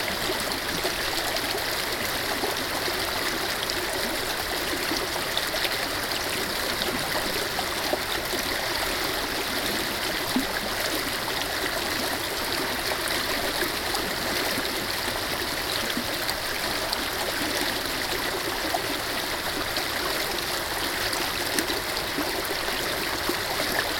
Quisipata, Peru - Irrigation canal through Quisipata
Binaural recording of one of the many irrigation canals you can find in the Andes valley of the river Aynín in Perú.